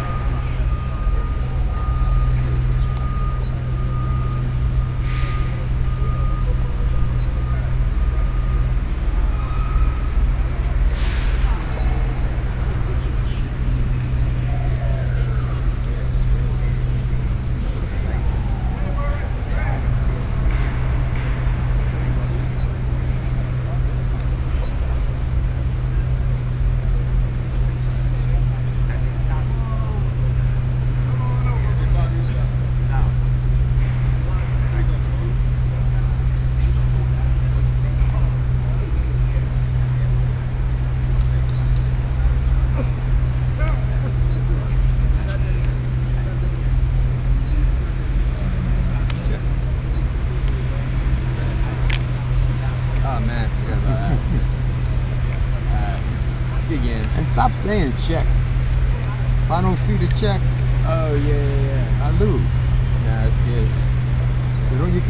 Washington Square, New York - Chess players in Washington Square, New York
Chess players in Washington Square, New York. Joueurs d'échec à Washington Square.